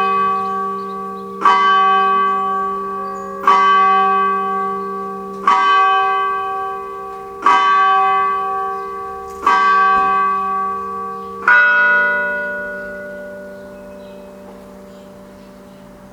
Pairana (PV), Italy - Autumn morning close to countryside
Birds, dogs, church bells, a distant cow. Rumblings from the sky due to airplanes landing in Milan try to corrupt the peace of this place
Pairana Province of Pavia, Italy, 20 October